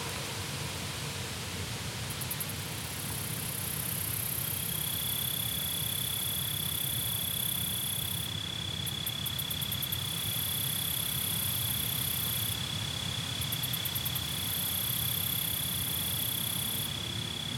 Toronto, ON, Canada - Crickets and Susurration
Side of roadway to lighthouse in Tommy Thompson Park. Interesting interplay between the sounds of crickets and the susurration of leaves caused by the wind. A few cyclists ride past, with the first one stopping (squeal of brakes) to light a "cigarette" before continuing.